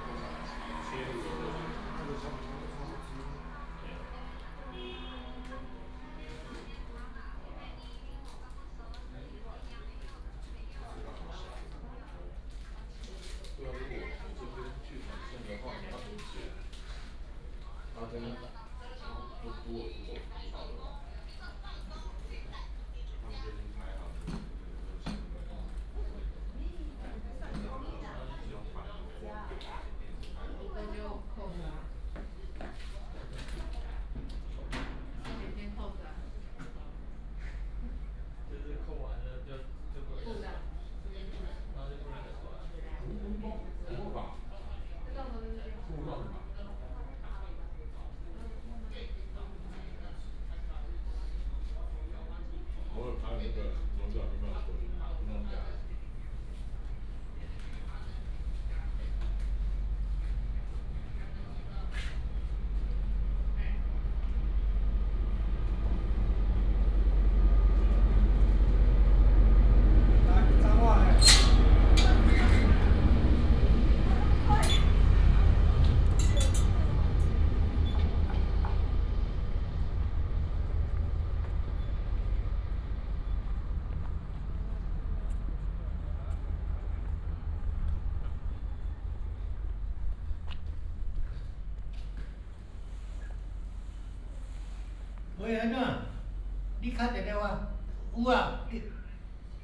At the station platform, The train passes by
Taichung City, Taiwan, 27 February, 13:06